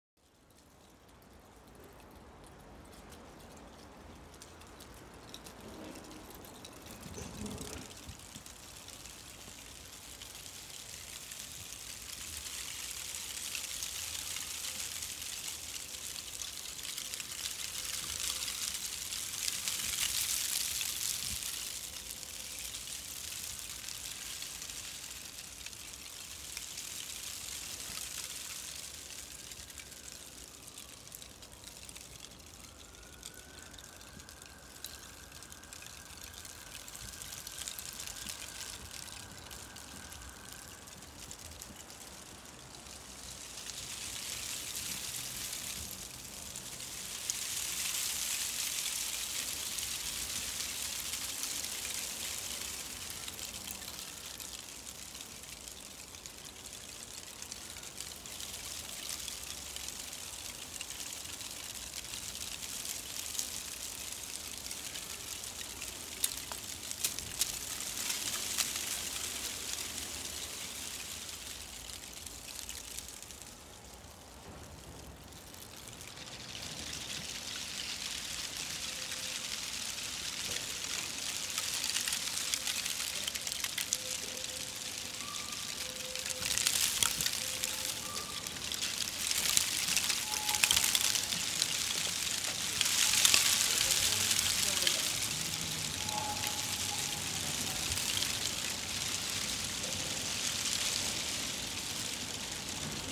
An eddy of wind formed at a street corner in Vinohrady (Prague) sets the dry leaves collected there into a phantom dervish.